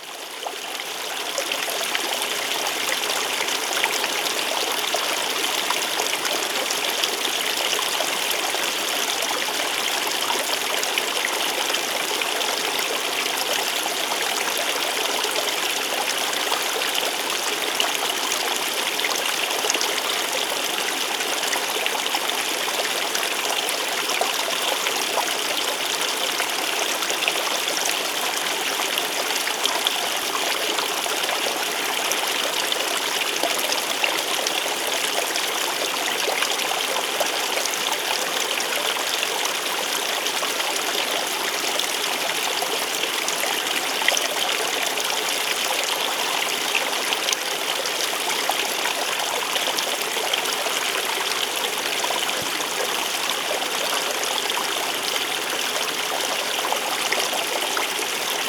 Dagneux, Ruisseau / Creek Chemin des Irandes.
Dagneux, France, 16 September 2006